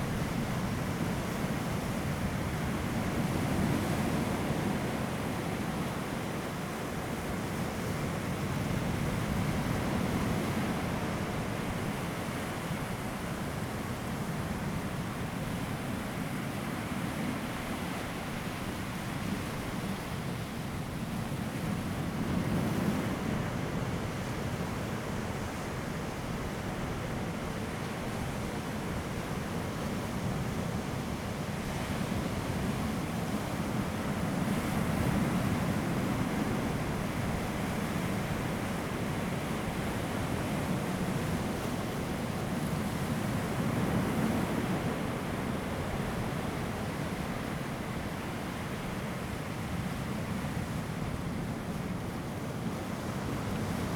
Donghe Township, Taitung County - Sound of the waves
Sound of the waves, Very hot weather
Zoom H2n MS+ XY
Taitung County, Taiwan, 6 September 2014